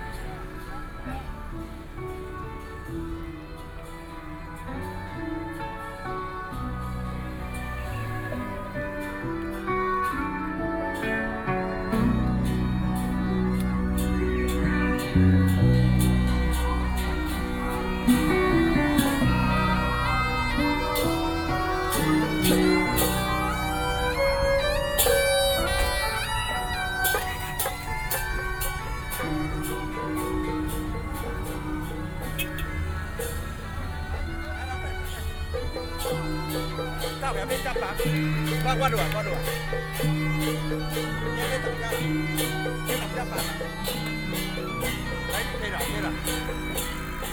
Funeral, Zoom H4n+ Soundman OKM II